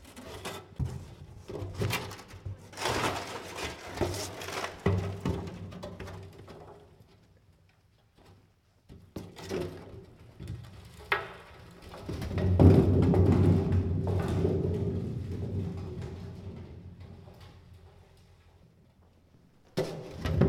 Méry-sur-Oise, France - Messing around abandonned stuff in a underground Quarry
Messing around abandonned stuff in a underground Quarry
On trouve toute sorte d'objets dans les carrières abandonnées d'Hennocque.
Un baril rouillé, des planches, des étagères...
Playing with somes rusty Oil drum and old props in a abandoned underground Quarry.
The floor is wet.
no objects were harmed in the making of this recording.
/zoom h4n intern xy mic
8 April 2015, 05:45